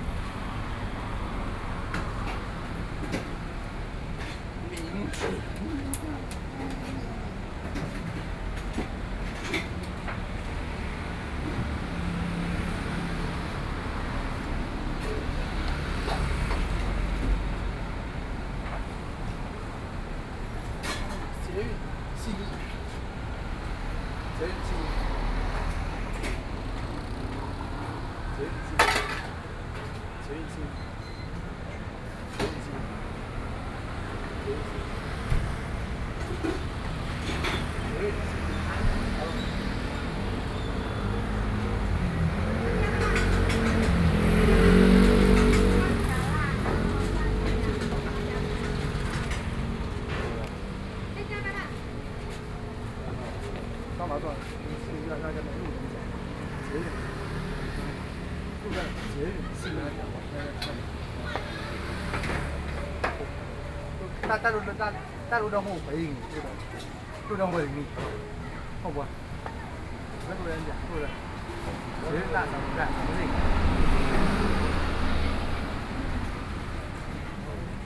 北投區中和里, Taipei City - At the roadside
In front of fried chicken shop, Traffic Sound
Sony PCM D50+ Soundman OKM II